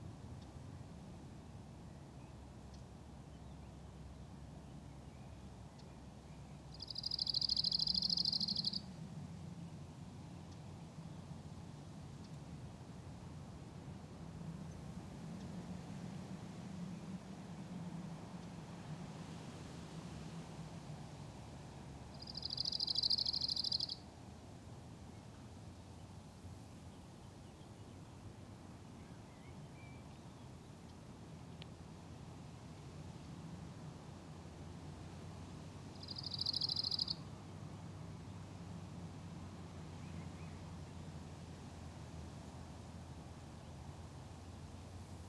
{"title": "Hreljin, Croatia, Bird Airplane - Shy Bird", "date": "2013-05-12 16:30:00", "latitude": "45.28", "longitude": "14.61", "altitude": "409", "timezone": "Europe/Zagreb"}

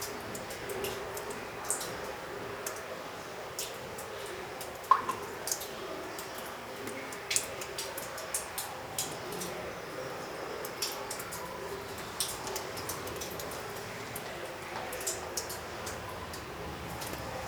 {"title": "Falkensteiner Höhle - In der Höhle", "date": "2009-04-05 15:09:00", "latitude": "48.51", "longitude": "9.45", "altitude": "625", "timezone": "Europe/Berlin"}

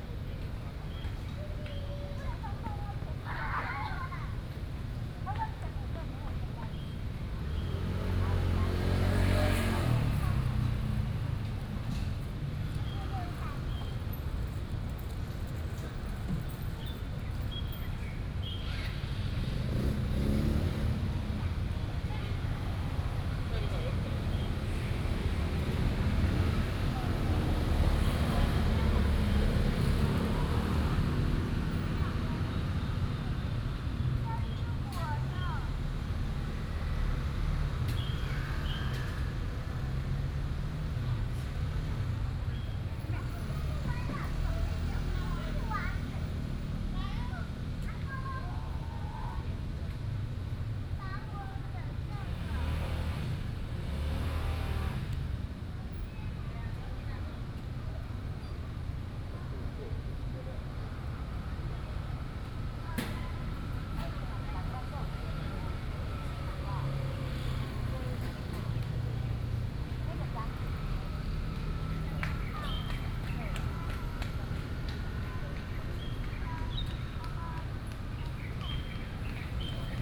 {"title": "Sec., Heping E. Rd., Da'an Dist. - Sitting in the street", "date": "2015-06-28 18:05:00", "description": "Sitting in the street, Next to the park, Children in the park, Traffic Sound, Hot weather, Bird calls", "latitude": "25.02", "longitude": "121.54", "altitude": "20", "timezone": "Asia/Taipei"}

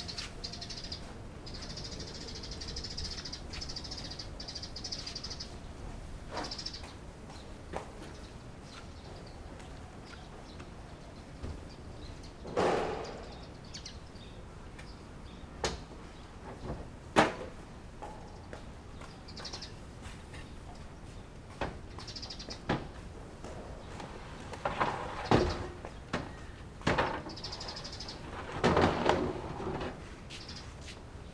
{"title": "菜園海洋牧場遊客中心, Magong City - In front of the pier", "date": "2014-10-23 10:34:00", "description": "In the dock, Birds singing, Wind\nZoom H6+Rode NT4", "latitude": "23.55", "longitude": "119.60", "altitude": "4", "timezone": "Asia/Taipei"}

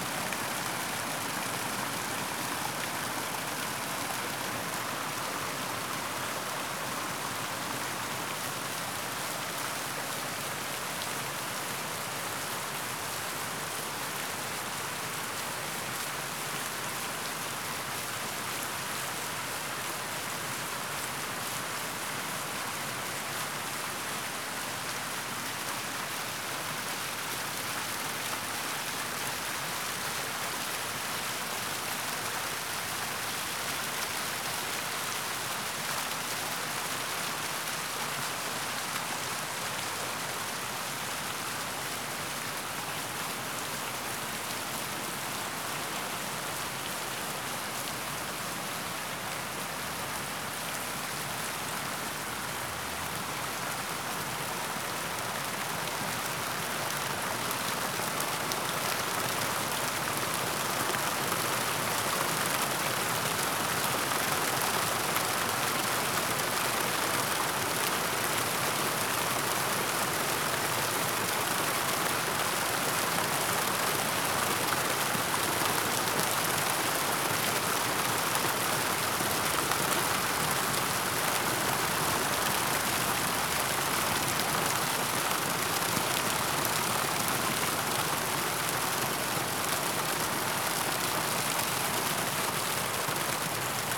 {"title": "Berlin, Gardens of the World, oriental garden - water shifts", "date": "2013-08-03 12:58:00", "description": "different fountains in oriental gardens. i walked around slowly in order to get smooth fades between various flows of water.", "latitude": "52.54", "longitude": "13.58", "altitude": "52", "timezone": "Europe/Berlin"}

